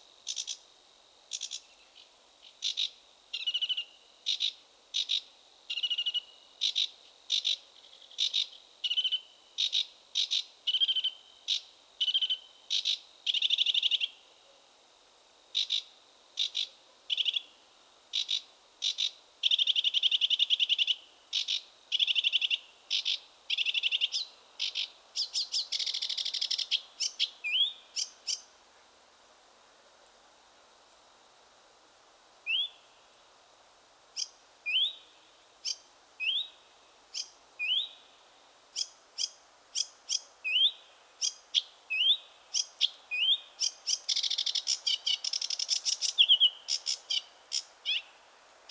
Utena, Lithuania, sedge warbler song
well, actually I went to listen bats...however this sedge warbler song is not less enchanting than signals of echolocating bats
Utenos apskritis, Lietuva, May 2021